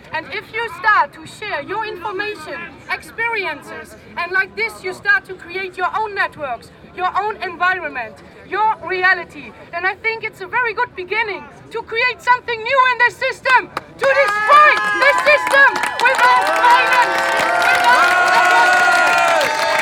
{"title": "15O - Occupy Den Haag, Plein, speech Nightfall", "date": "2011-10-15 14:00:00", "latitude": "52.08", "longitude": "4.32", "altitude": "9", "timezone": "Europe/Amsterdam"}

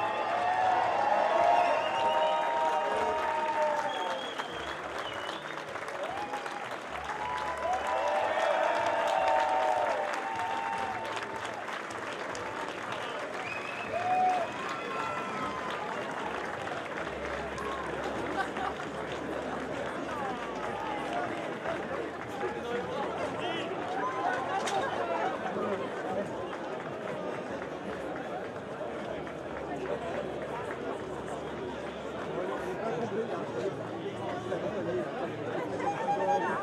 {"title": "Rue du Bourg, Messimy-sur-Saône, France - Sortie de messe de mariage - volée de cloche et applaudissement - départ des mariés.", "date": "2022-09-17 13:00:00", "description": "Messimy-sur-Saône - place de l'église - 17/09/2022 - 13h\nSortie de cérémonie de mariage : volée de cloche et applaudissements - départ des mariés.\nPour Malo et Belén, en remerciement pour cette belle journée.\nZOOM F3 + Audio Technica BP4025", "latitude": "46.05", "longitude": "4.76", "altitude": "192", "timezone": "Europe/Paris"}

